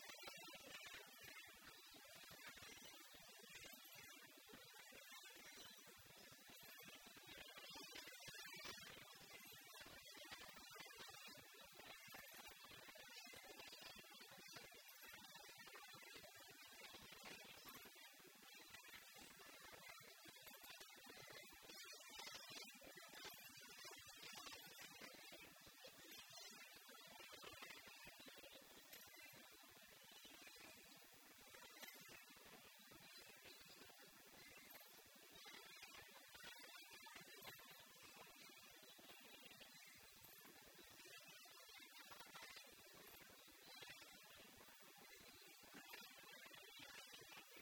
Kolhapur, Rankala lake, Infernal swing
India, Maharashtra, Kolhapur, Park, Swing, Children